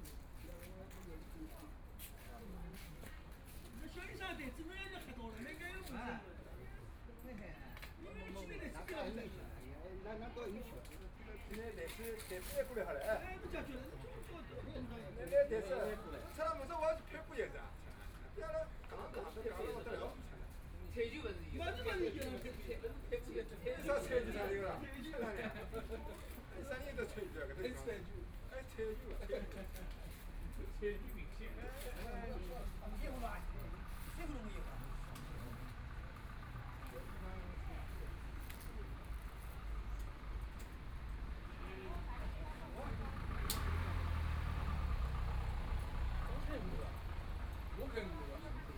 Penglai Park, Shanghai - Playing cards
Very cold weather, Many people hide behind the wall cards, Binaural recording, Zoom H6+ Soundman OKM II